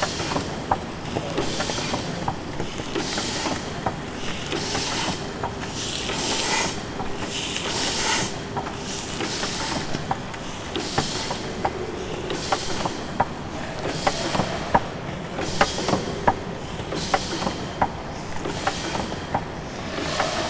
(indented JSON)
{
  "title": "Sound of the steam engine, Bubeneč",
  "date": "2009-12-19 20:21:00",
  "description": "One of recorded sounds of steam engine running in the Ecotechnical Muzeum in Bubeneč.",
  "latitude": "50.11",
  "longitude": "14.40",
  "altitude": "186",
  "timezone": "Europe/Prague"
}